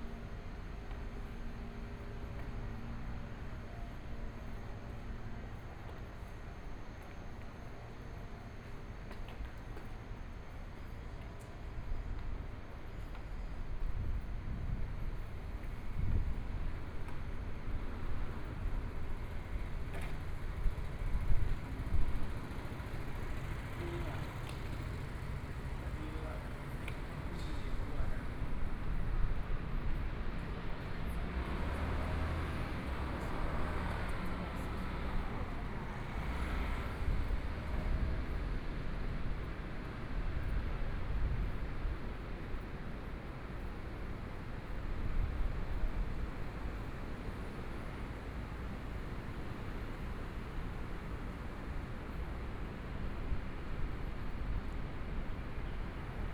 中山區正守里, Taipei City - walking in the Street
walking in the Street, Birds sound, Traffic Sound
Please turn up the volume a little. Binaural recordings, Sony PCM D100+ Soundman OKM II